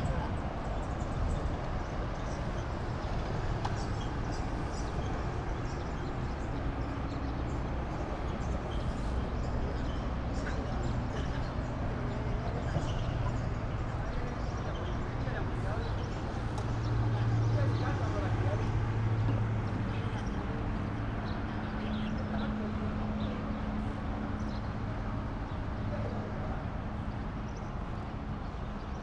八里, Bali Dist., New Taipei Cit - In the small fishing pier
In the small fishing pier, traffic sound
Sony PCM D50